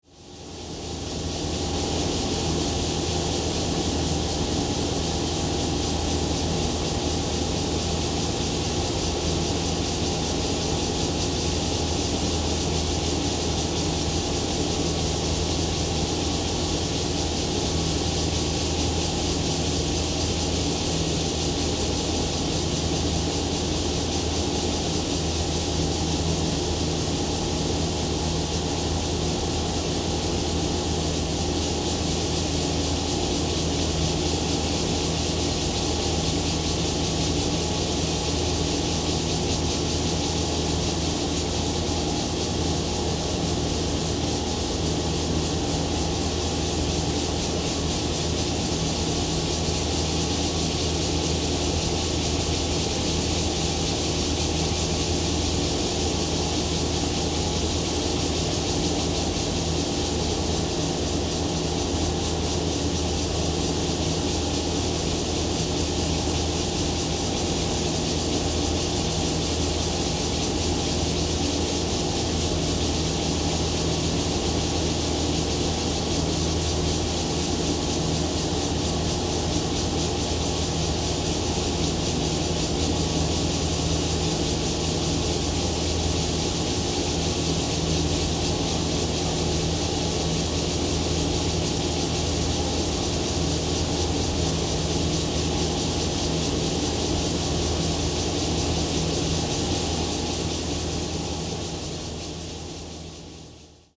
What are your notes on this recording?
Air-conditioning noise, Cicadas cry, Zoom H2n MS+XY